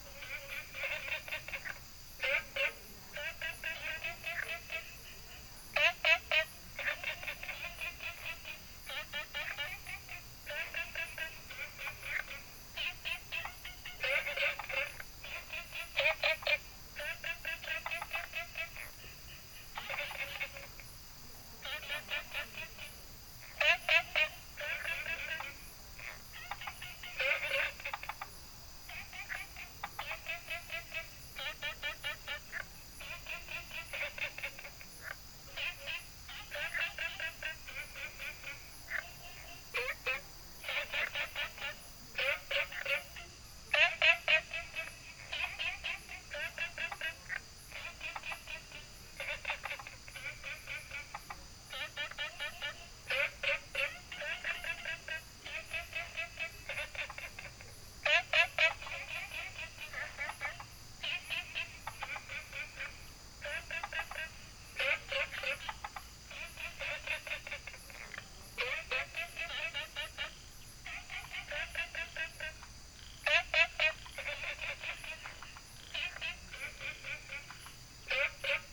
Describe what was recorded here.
Frogs chirping, Insects called, Small ecological pool, Dogs barking